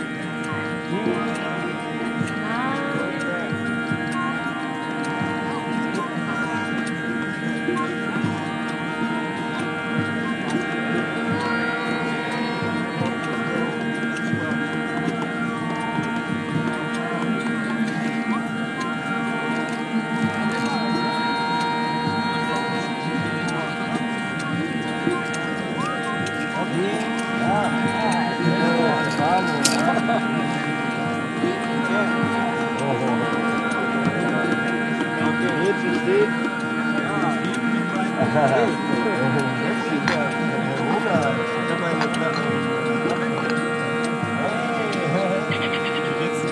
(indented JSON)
{
  "title": "Obelių seniūnija, Lithuania - Rainbow shaman",
  "date": "2015-08-09 23:06:00",
  "description": "European Rainbow Gatherings in the Baltics",
  "latitude": "55.87",
  "longitude": "25.95",
  "altitude": "146",
  "timezone": "Europe/Vilnius"
}